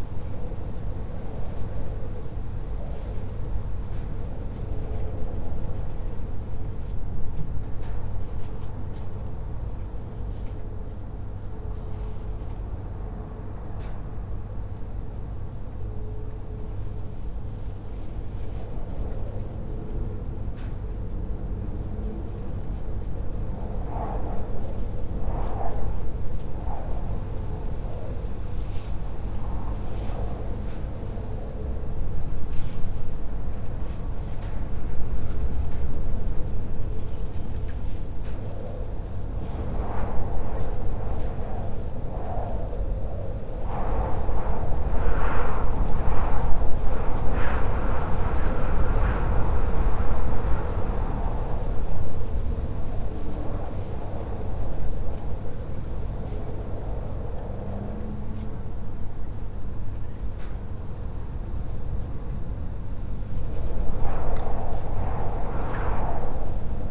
wind gushing through a narrow gap of a sliding door. metal sheet balcony wall bends in the wind. wooden wind chime on the neighbor's balcony. (roland r-07)